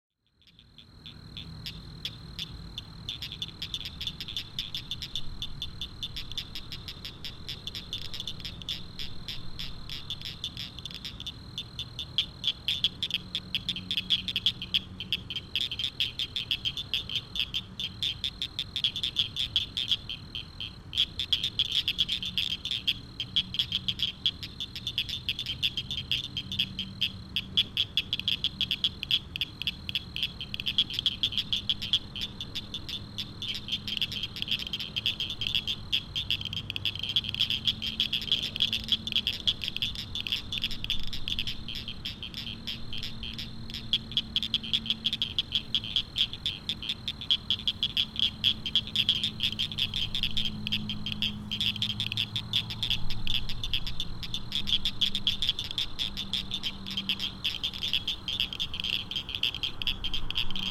{"title": "Evening frogs at Brushy Creek, Austin TX", "date": "2010-03-25 06:48:00", "description": "frogs active in early spring near the creek", "latitude": "30.50", "longitude": "-97.78", "altitude": "249", "timezone": "Europe/Tallinn"}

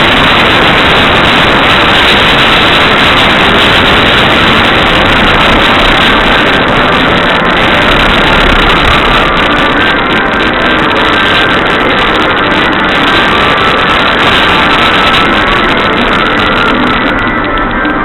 {"title": "Patchinko a hell of gamecenter", "latitude": "35.65", "longitude": "139.72", "altitude": "22", "timezone": "GMT+1"}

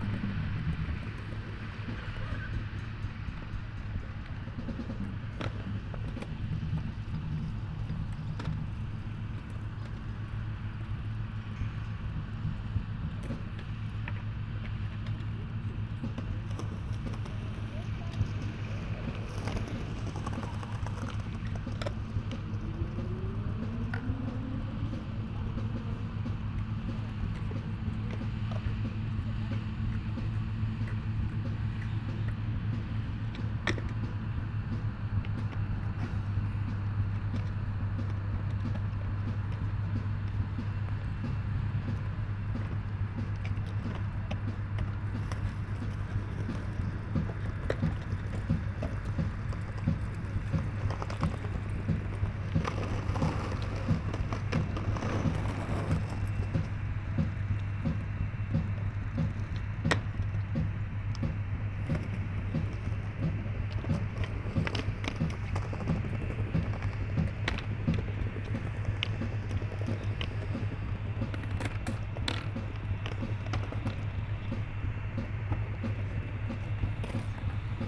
{"title": "Tempelhofer Park, Berlin, Deutschland - skater park, mower at work", "date": "2017-08-07 19:45:00", "description": "Skaters, music from a beatbox, a mower at work on the Tempelhof meadows\n(Sony PCM D50, Primo EM172)", "latitude": "52.47", "longitude": "13.41", "altitude": "46", "timezone": "Europe/Berlin"}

